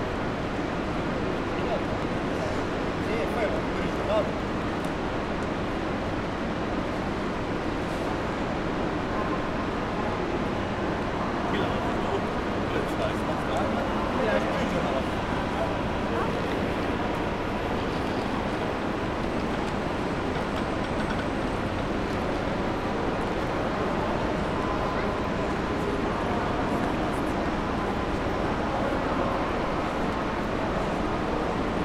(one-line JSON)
{"title": "Frankfurt (Main) Hauptbahnhof, Gleiszugang - Gleizugang", "date": "2020-03-21 12:14:00", "description": "This recording, second in the series of recordings during the 'Corona Crisis', starts with a coughing that became a new meaning. The microphone walks into the great hall and rests close to the platform 8. Again there is rather nothing audible which is at that spot remarkable. It is friday at noon, normally the hall is full of people that are hurrying from one platform to another. Here sometimes you hear people running, but not very many...", "latitude": "50.11", "longitude": "8.66", "altitude": "115", "timezone": "Europe/Berlin"}